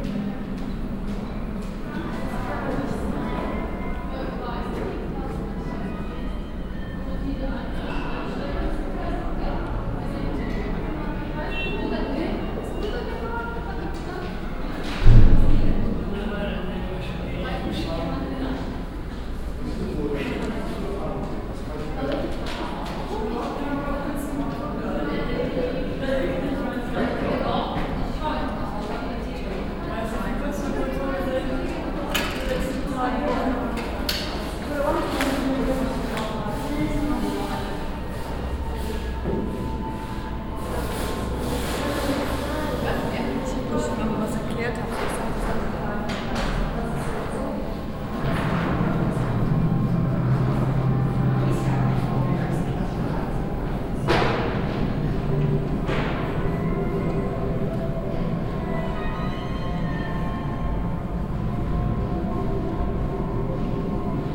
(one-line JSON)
{"title": "cologne, josef-haubricht hof, vhs, klanginstallation zu plan08", "date": "2008-09-23 09:19:00", "description": "temporäre klanginstallation zu plan08 von johannes s. sistermanns in den paternostern der volkshochschule köln\nsoundmap nrw: social ambiences, art places and topographic field recordings", "latitude": "50.94", "longitude": "6.95", "altitude": "56", "timezone": "Europe/Berlin"}